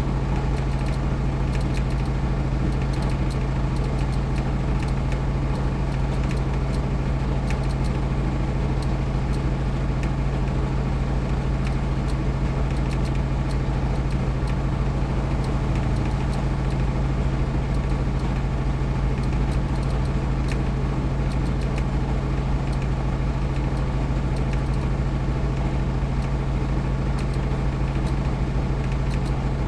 berlin: liberdastraße - the city, the country & me: generator
the city, the country & me: august 20, 2010